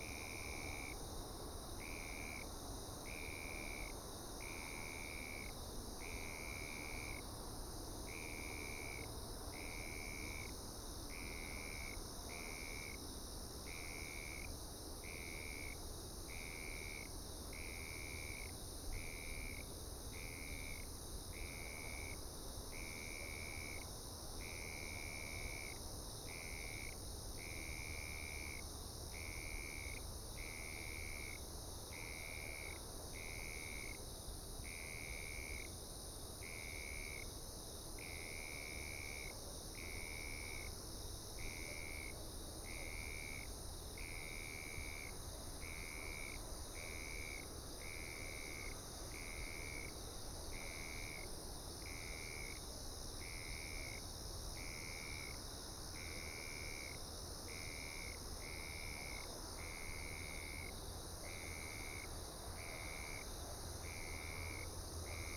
The park at night, The distant sound of traffic and Sound of the waves, Zoom H6 M/S